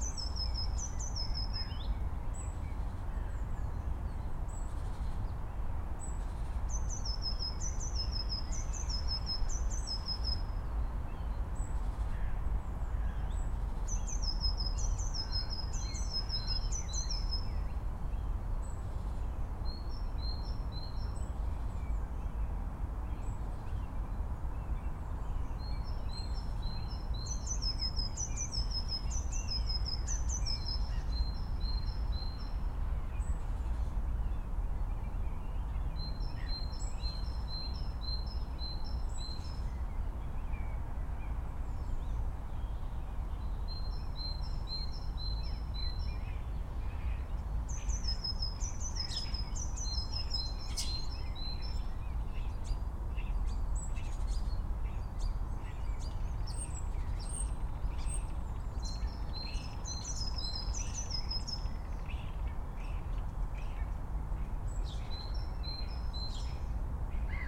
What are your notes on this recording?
Enregistré dans la poubelle de Fiskisland, on entend mouche, oiseaux et bruits forestiers couvert par la route.